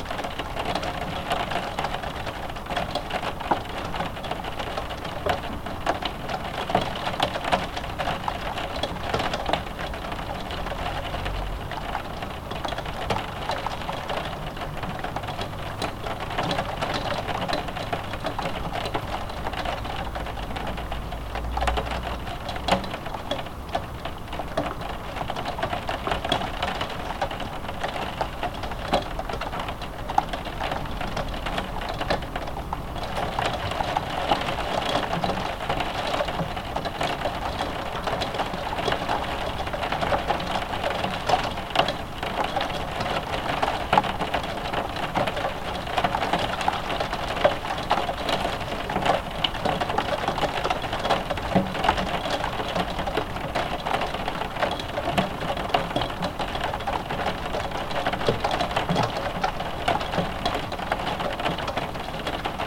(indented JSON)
{
  "title": "Dekerta, Kraków, Poland - (739 UNI) Rain drops on a roof window",
  "date": "2021-04-03 12:25:00",
  "description": "Rain drops on a glass roof window.\nrecorded with UNI mics of a Tascam DR100 mk3\nsound posted by Katarzyna Trzeciak",
  "latitude": "50.05",
  "longitude": "19.96",
  "altitude": "202",
  "timezone": "Europe/Warsaw"
}